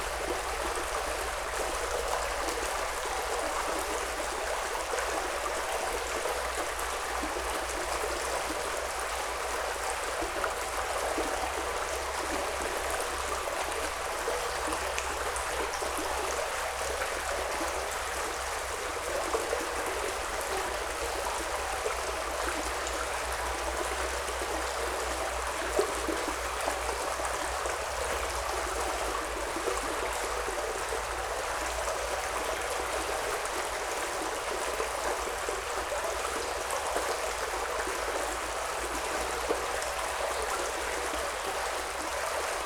Lithuania, Radeikiai, river in the tube
small river in the tube under the road. some low tones heard - its traffic of distant magistral road